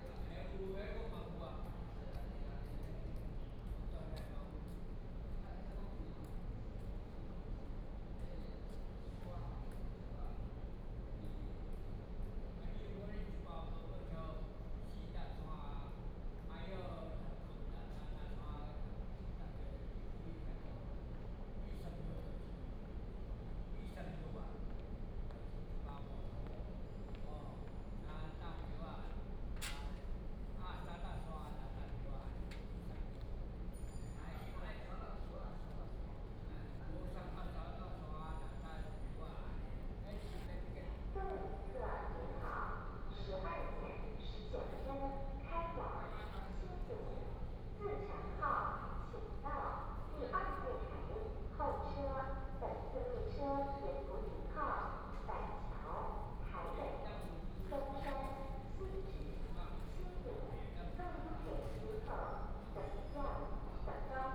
At the station platform, Bird call, Station information broadcast, The train arrived at the stop, Binaural recordings, Sony PCM D100+ Soundman OKM II
Taoyuan City, Taiwan